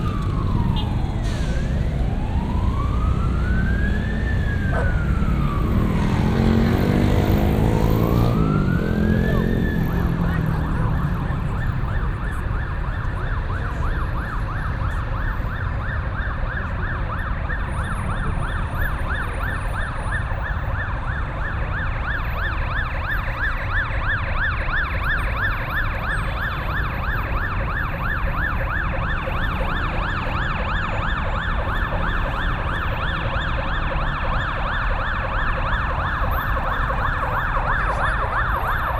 Athens, square in front of Panathenaic Stadium - evening traffic
(binaural) heavy and tiresome traffic is common in Athens. the intersection in front of me was totally jammed. as soon as some space was made the drivers took off furiously, cranking up their engines and making even more noise. (sony d50 + luhd PM-01's)